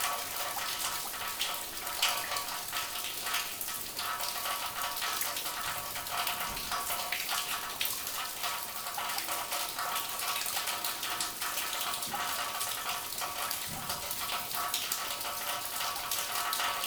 Bonneuil-en-Valois, France - Underground quarry
Into a big underground quarry, water falling from a pit on various objects like bottles. These bottles are covered with a thick layer of limestone.